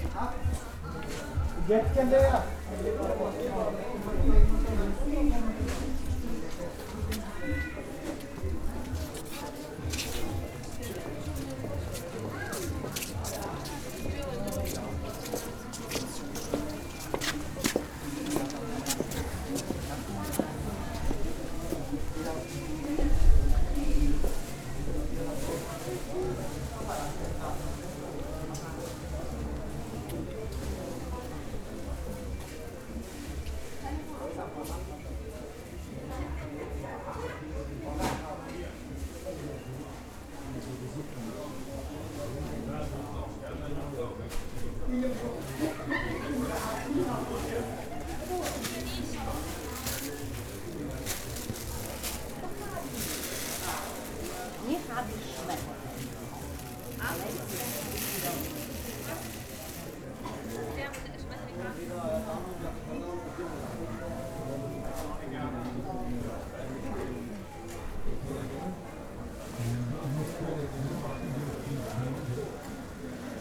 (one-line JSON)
{"title": "berlin, herzbergstraße: dong xuan center, halle - the city, the country & me: dong xuan center, hall 3", "date": "2011-03-06 17:22:00", "description": "soundwalk through hall 3 of the dong xuan center, a vietnamese indoor market with hundreds of shops where you will find everything and anything (food, clothes, shoes, electrical appliance, toys, videos, hairdressers, betting offices, nail and beauty studios, restaurants etc.)\nthe city, the country & me: march 6, 2011", "latitude": "52.53", "longitude": "13.49", "altitude": "52", "timezone": "Europe/Berlin"}